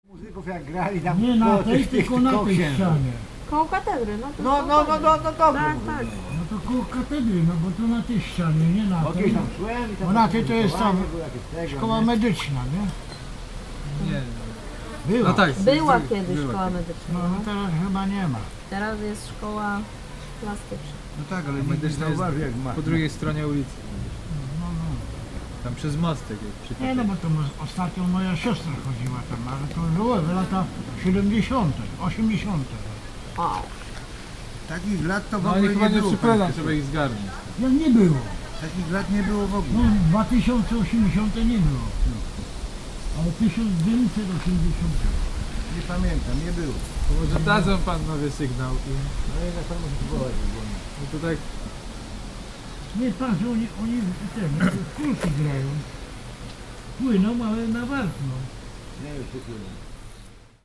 Nowe Warpno, Polska - talk about nothing
recorded Marcin Korneluk, talking about nothing at marina place
Nowe Warpno, Poland, 19 August 2015